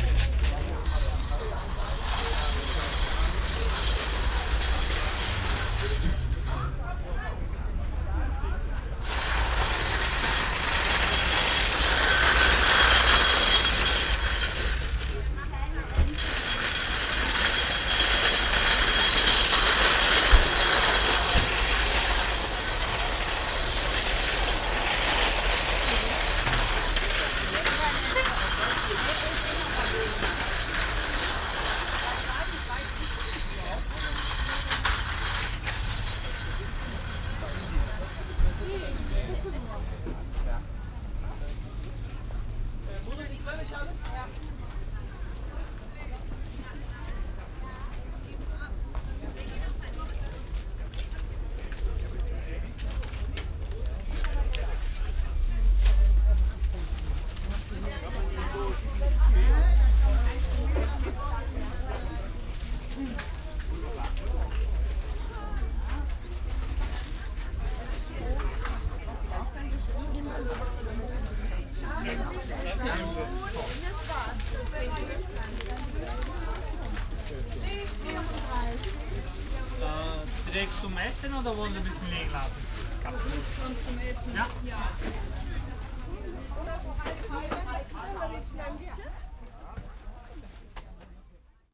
refrath, steinbreche, markplatz, wochenmarkt
wochenmarkt, refrath, gang zwischen ständen, mittags
soundmap nrw - social ambiences - topograpgic field recordings